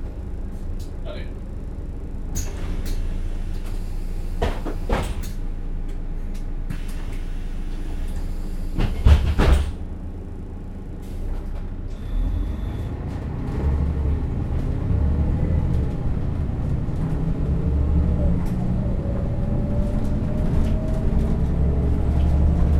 {"title": "Postauto Nunningen, Kanton Solothurn - Postauto Nunningen", "date": "2011-06-12 11:49:00", "description": "Ankunft Postauto in Nunningen, kleines Dorf im Kanton Solothurn, Sonntags hat die Bäckerei offen, ansonsten nur Kirchgänger.innen und Wander.innen", "latitude": "47.39", "longitude": "7.62", "altitude": "625", "timezone": "Europe/Zurich"}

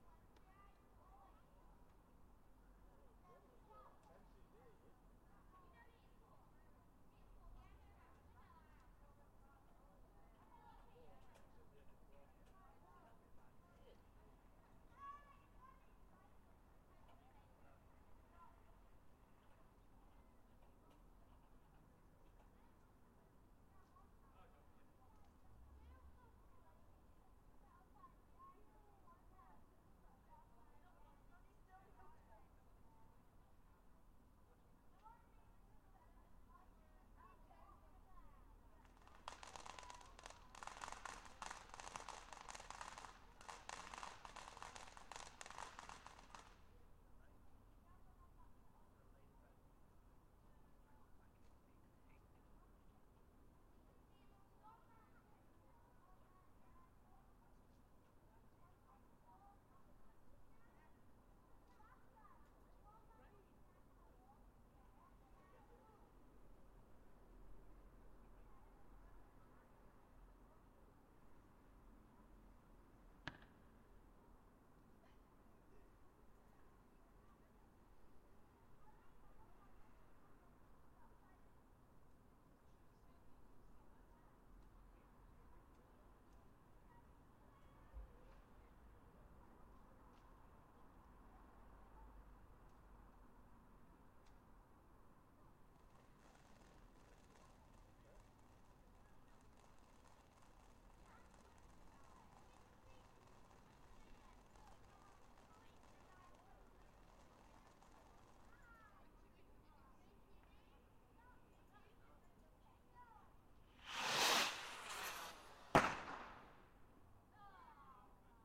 {"title": "Guy Fawkes Bonfire Party", "description": "Sounds of bnfire, people and fireworks for November 5th Guy Fawkes Bonfire celebrations", "latitude": "53.23", "longitude": "-1.28", "altitude": "171", "timezone": "Europe/London"}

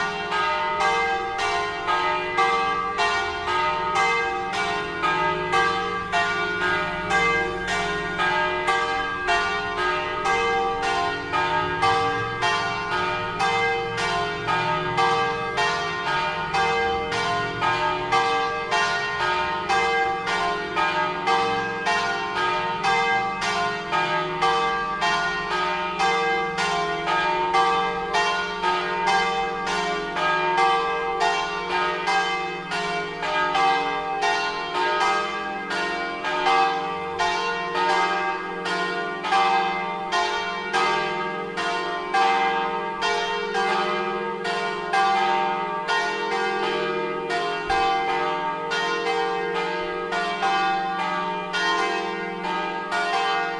{"title": "Santa Giustina BL, Italia - Campane", "date": "2014-05-06 12:00:00", "description": "bell tower of Santa Giustina", "latitude": "46.08", "longitude": "12.04", "altitude": "307", "timezone": "Europe/Rome"}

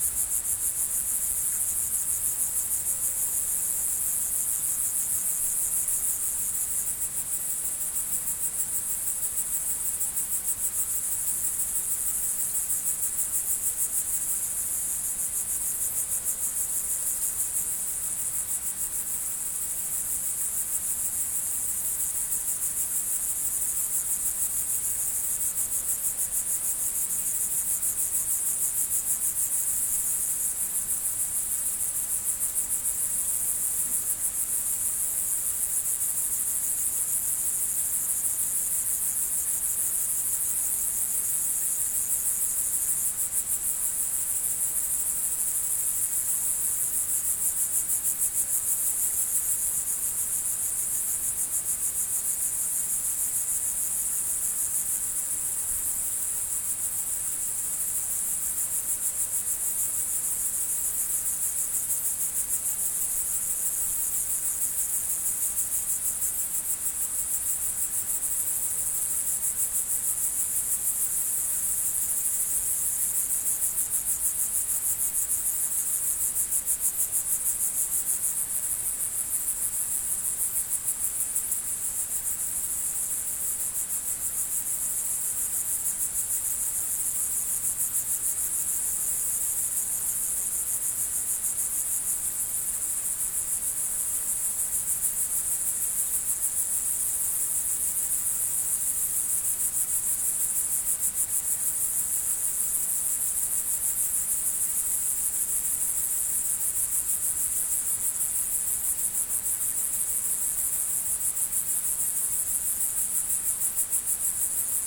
{"title": "Lądek-Zdrój, Pologne - Grasshoppers", "date": "2016-08-17 21:12:00", "description": "A lot of crazy grasshoppers in a extensive grazing.", "latitude": "50.39", "longitude": "16.86", "altitude": "511", "timezone": "Europe/Warsaw"}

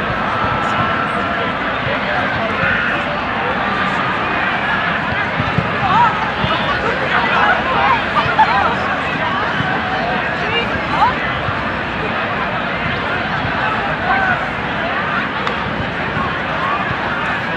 {"title": "Colenso Parade, Belfast, UK - St Patricks Day Belfast", "date": "2021-03-17 16:13:00", "description": "Doubled recording stitched together, beginning near Belfast City Hall where the St. Patricks Parade would usually march off from. Without the annual parade, there is only regular city noises from birds, dogs walked, vehicles, cyclists, and pedestrians.\nThe second half of the recording ventures into Botanic Gardens, in which, large groups of people who were set up partying in the middle of the big empty field. There was dancing, shouting, balls kicked, mixed in with the wildlife of the park. The ending of the recording has the park staff closing some of the gates to direct people through main gates as the police came in to disperse the crowd.", "latitude": "54.58", "longitude": "-5.93", "altitude": "21", "timezone": "Europe/London"}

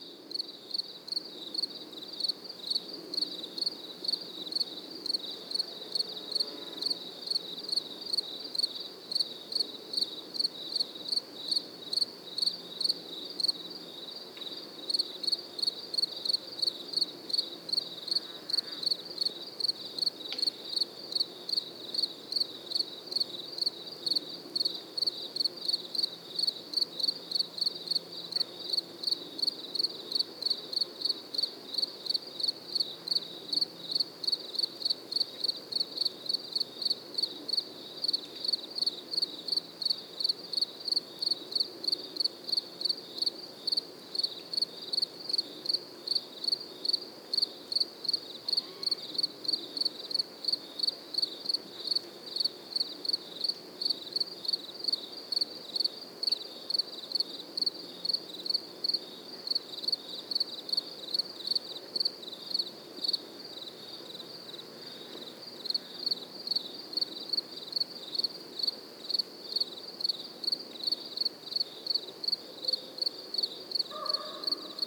{"title": "Helliwell Park, Hornby Island, British Columbia, Canada - Grasshoppers and other summer insects", "date": "2015-08-09 17:30:00", "description": "Grasshoppers etc in the Helliwell meadow. Telinga stereo parabolic mic and Tascam DR680mkII recorder", "latitude": "49.52", "longitude": "-124.60", "altitude": "24", "timezone": "America/Vancouver"}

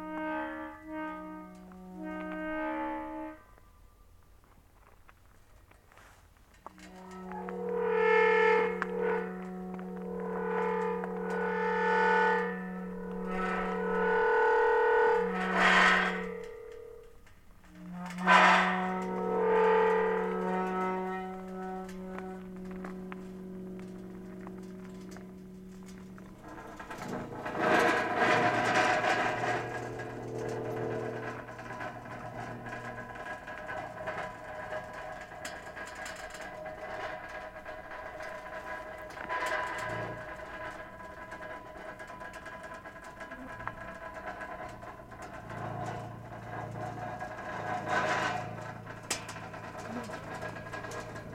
{"title": "Ruta, Puerto Progreso, Primavera, Santa Cruz, Chile - storm log - faro gate", "date": "2021-02-15 15:18:00", "description": "rusty gate to faro road, wind SW 12 km/h, ZOOM F!, XYH-6 cap\nOne of the countless cattle fence gates. Two wings, Heavy, rusty, noisy.", "latitude": "-52.51", "longitude": "-69.51", "altitude": "13", "timezone": "America/Punta_Arenas"}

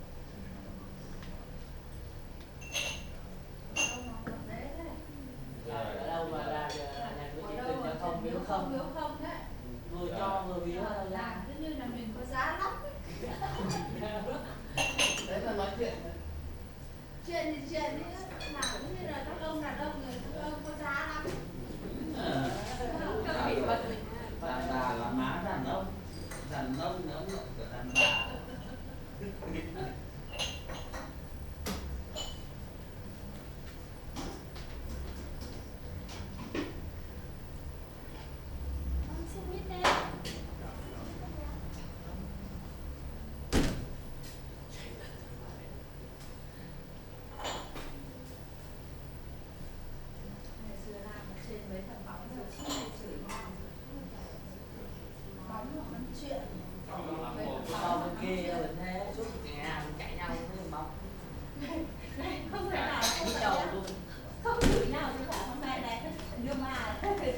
{"title": "hasenheide, food store - vietnamese restaurant", "date": "2009-02-05 12:30:00", "description": "05.02.2009, 12:30 mittagessenszeit im hamy restaurant / lunch time, hamy restaurant", "latitude": "52.49", "longitude": "13.42", "altitude": "39", "timezone": "Europe/Berlin"}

